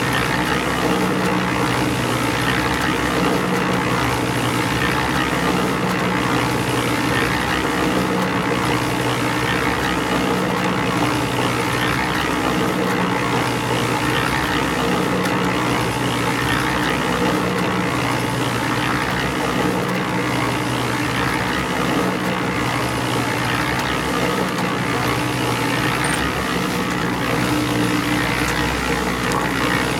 R. Vinte e Cinco de Junho, Cachoeira - BA, 44300-000, Brasil - Betoneira - Concrete Mixer
Betoneira (máquina de misturar concreto) na obra da rua Vinte e Cinco de Junho.
Concrete Mixer in the Vinte e Cinco de Junho street construction.
Cachoeira - BA, Brazil, 25 January 2018, 09:26